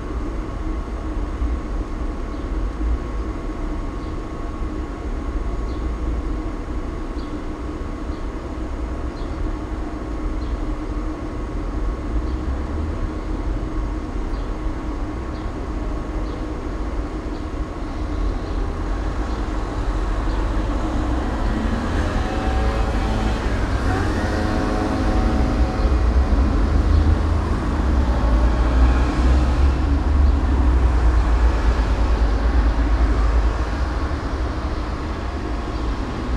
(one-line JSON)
{"title": "Main train station, Maribor, Slovenia - maribor2012 landmark: cona a", "date": "2012-06-19 13:06:00", "latitude": "46.56", "longitude": "15.66", "altitude": "271", "timezone": "Europe/Ljubljana"}